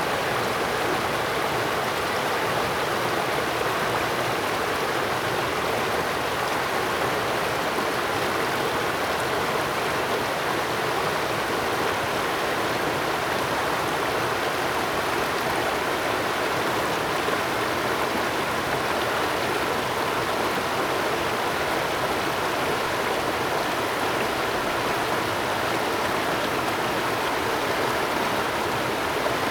Streams
Zoom H2n MS+XY +Sptial Audio